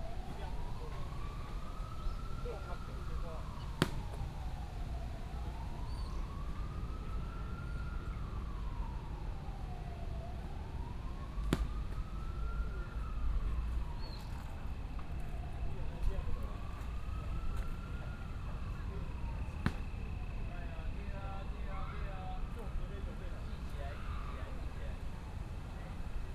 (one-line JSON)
{"title": "Qingnian Park, Wanhua Dist., Taipei City - in the Park", "date": "2017-04-28 15:34:00", "description": "in the Park, The pupils are practicing against baseball, birds sound, traffic sound", "latitude": "25.02", "longitude": "121.50", "altitude": "16", "timezone": "Asia/Taipei"}